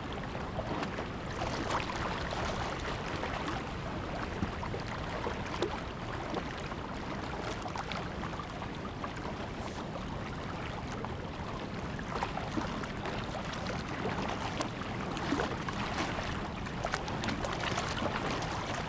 靜浦村, Fengbin Township - Small pier
Sound tide, Small pier, sound of the waves
Zoom H2n MS+XY
Fengbin Township, Hualien County, Taiwan